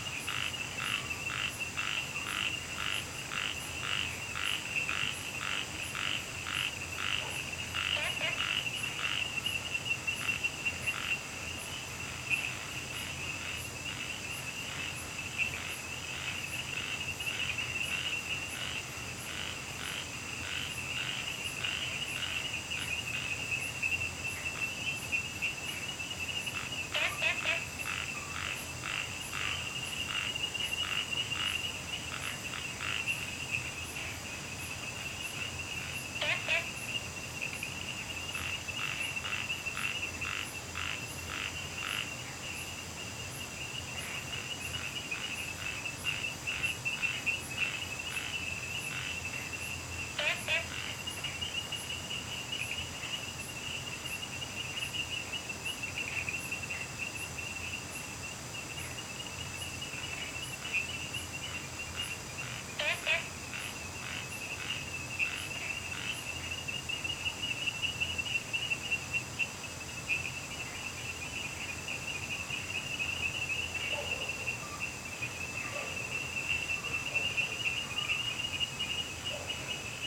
茅埔坑溼地, 南投縣埔里鎮桃米里 - Frogs chirping
Frogs chirping, Insects sounds, Dogs barking, Wetland
Zoom H2n MS+ XY
Puli Township, 桃米巷11-3號